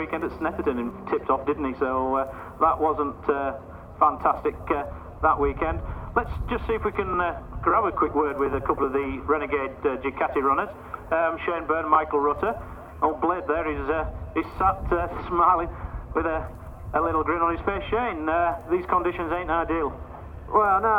Silverstone Circuit, Towcester, UK - world superbikes 2002 ... qualifying ...
world superbikes 2002 ... qualifying ... one point stereo to sony minidisk ... commentary ... time optional ...
England, UK, 25 June 2002